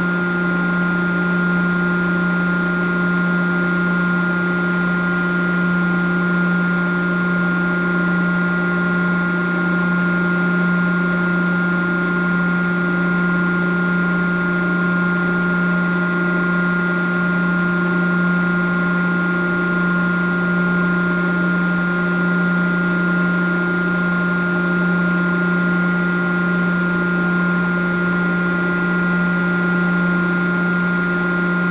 Mont-Saint-Guibert, Belgique - The dump
This is the biggest dump of Belgium. Here, a big pump is catching gas in the garbages.
2 October 2016, 13:30